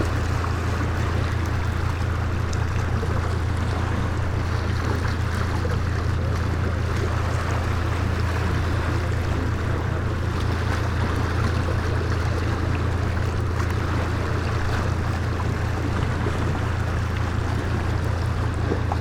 as lazy cat would do - under a straw hat, listening to the sea voices
Novigrad, Croatia, September 2012